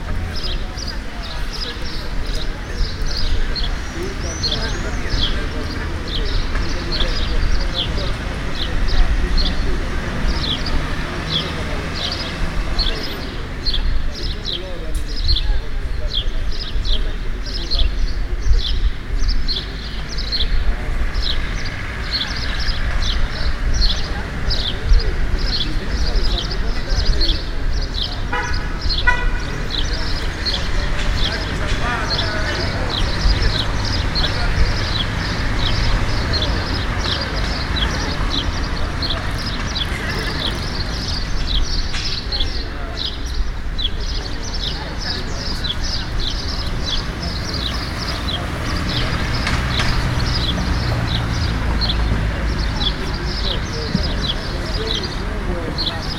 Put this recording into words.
A spring afternoon in the old part of my city, sitting on a bench of Pisanelli Square, between birds chipping and old man's conversation. There are also some cars passing by the road all around.